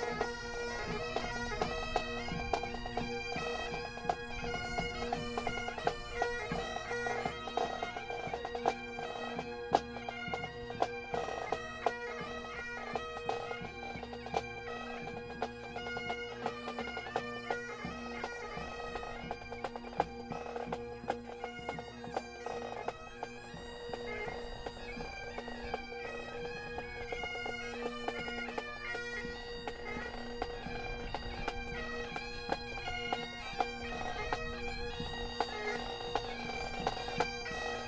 Berlin, Tempelhofer Feld - bagpipe players practising
a group of bagpipe players practising
(Sony PCM D50)
Deutschland, May 2020